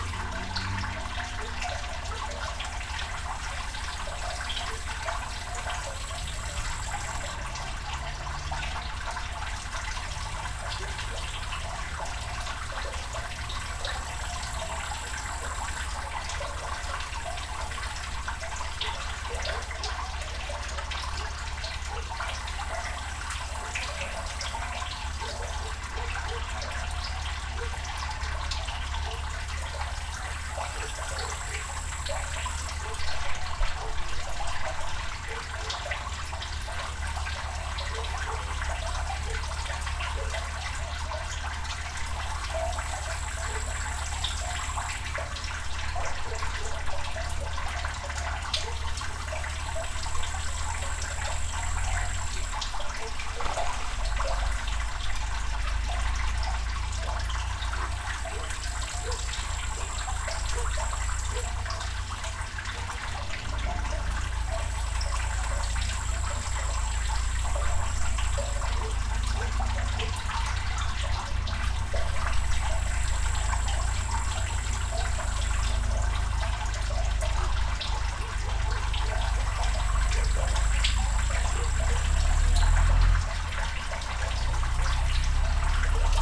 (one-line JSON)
{"title": "Leliūnų, Lithuania, on old dam", "date": "2015-08-20 16:15:00", "description": "soundscape from the edge of old soviet dam", "latitude": "55.48", "longitude": "25.40", "altitude": "155", "timezone": "Europe/Vilnius"}